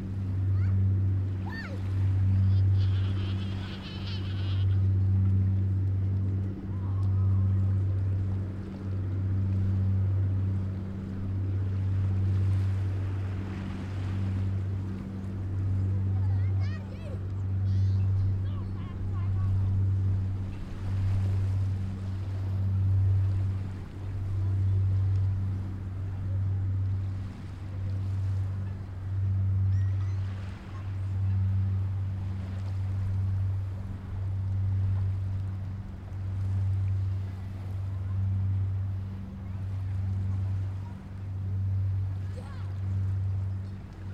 Mogan, Gran Canaria, on a beach
Lomo Quiebre, Las Palmas, Spain